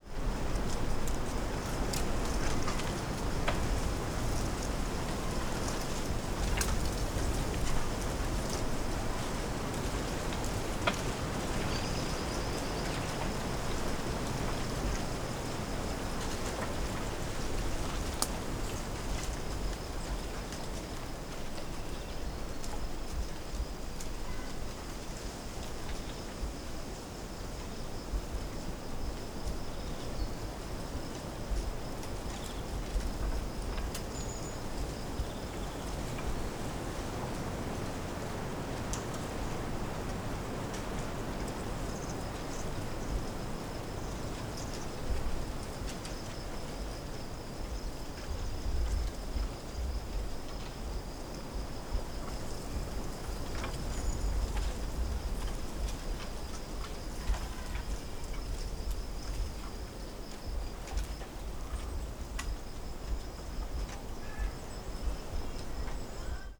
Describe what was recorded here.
wind blowing on trees and branches moving, Foros de Montargil, mono, rode ntg3, foster fr2 le